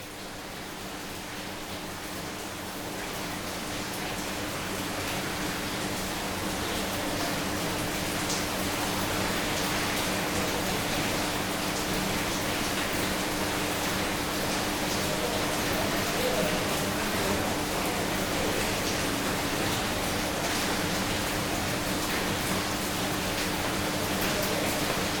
Fontoy, France - Havange schaft
Very busy and noisy ambience below the Havange schaft. The pumps are turning hard and there's a powerful downfall of water.